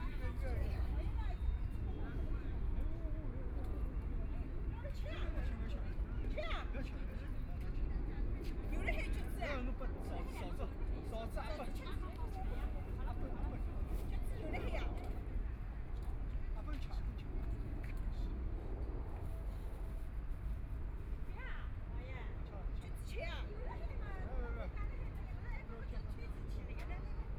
Huangxing Park, Shanghai - Shuttlecock
A group of old people are shuttlecock, Binaural recording, Zoom H6+ Soundman OKM II
Shanghai, China, November 2013